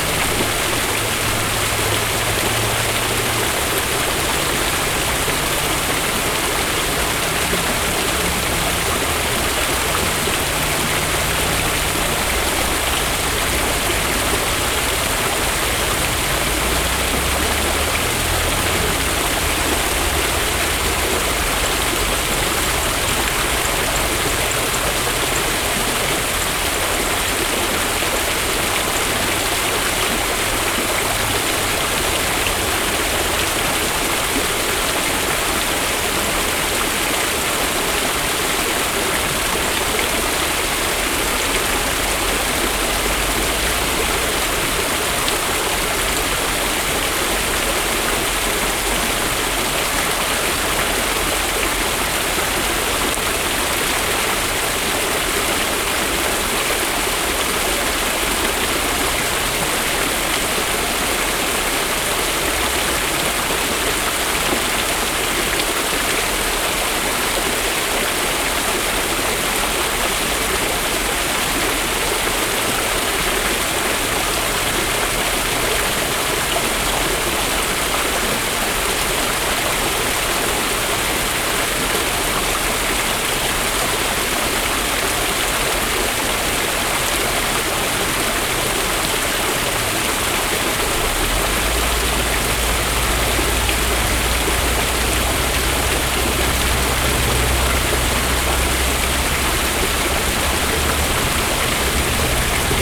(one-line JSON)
{"title": "Old Spicewood Springs Rd, Austin, TX, USA - Lower Bull Creek Waterfall, Austin Texas", "date": "2019-07-18 09:55:00", "description": "Recording of a waterfall on lower Bull Creek, part of the network of green belts in Austin, Texas. Recorded with a Tascam DR22, at about two meters distance.", "latitude": "30.38", "longitude": "-97.77", "altitude": "191", "timezone": "America/Chicago"}